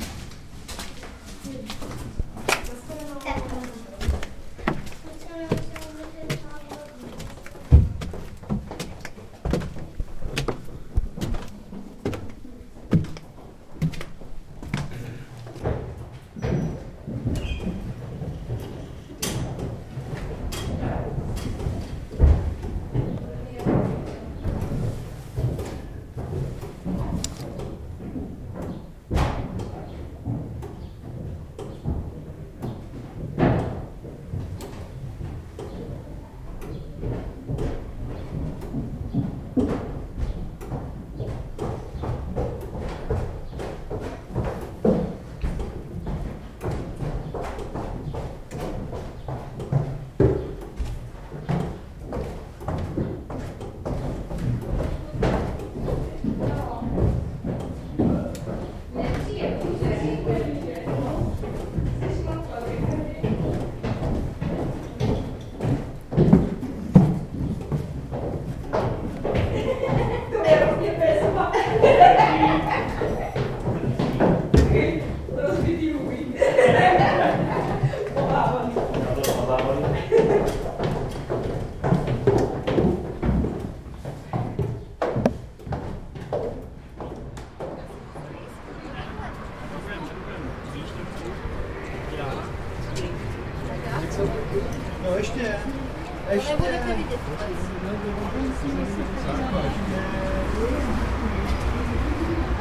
zelena brana, pardubice
climbing up the tower, sound workshop